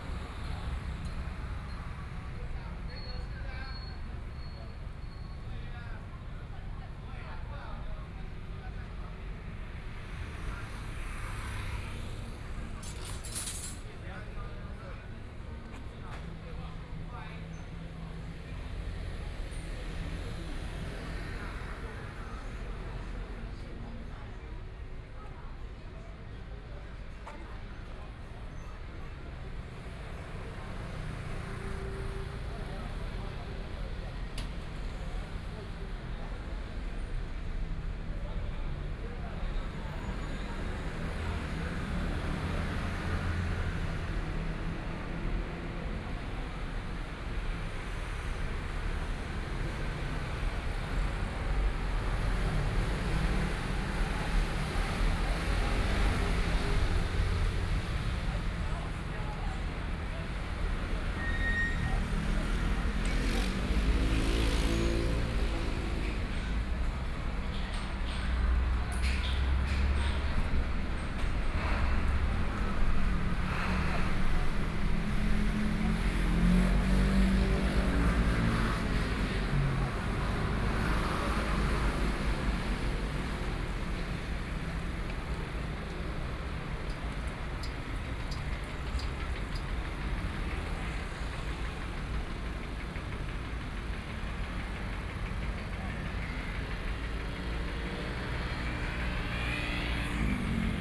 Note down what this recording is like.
Traffic Sound, Garbage truck music, Various shops voices, Binaural recordings, Zoom H4n+ Soundman OKM II ( SoundMap2014016 -24)